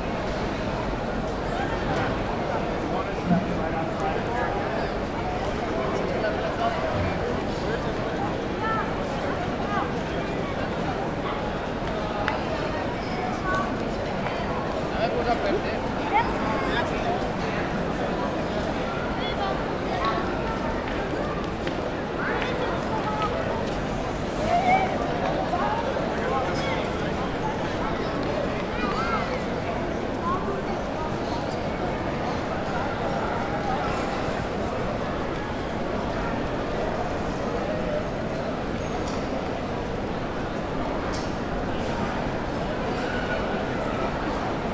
Barcelona, SPAIN
Plaça de Reial
REC: Sony PCM-D100 ORTF
9 August 2018, 14:35